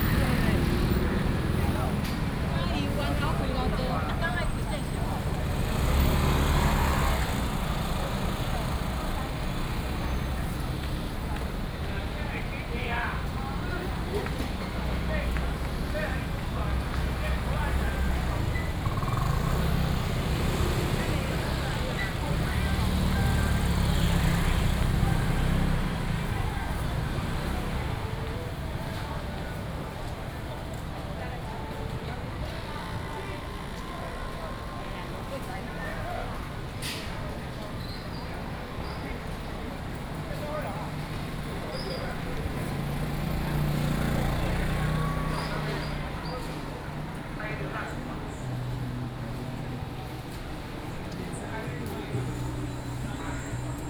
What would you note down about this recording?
Walking through the traditional market, Please turn up the volume a little. Binaural recordings, Sony PCM D100+ Soundman OKM II